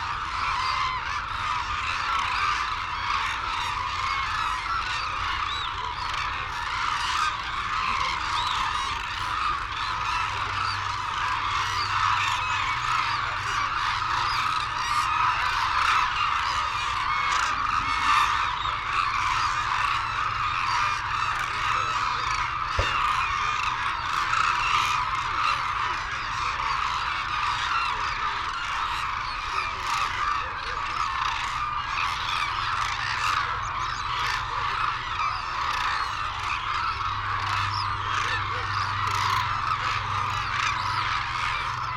Arasaki Crane Centre ... Izumi ... calls and flight calls from white naped cranes and hooded cranes ... cold sunny windy ... background noise ... Telinga ProDAT 5 to Sony Minidisk ... wheezing whistles from young birds ...